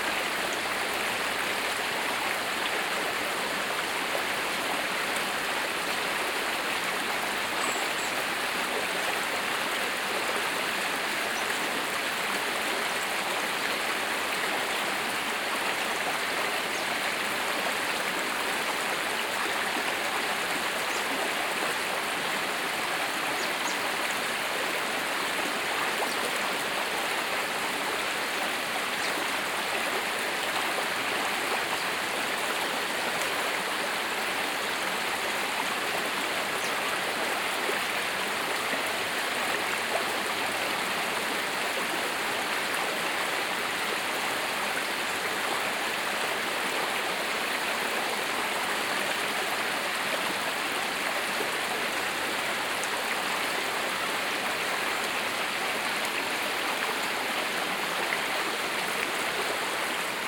Chem. de la Préhistoire, Sauveterre-la-Lémance, France - La Lémance River
Tech Note : SP-TFB-2 binaural microphones → Sony PCM-M10, listen with headphones.
Nouvelle-Aquitaine, France métropolitaine, France, 24 August 2022, 15:24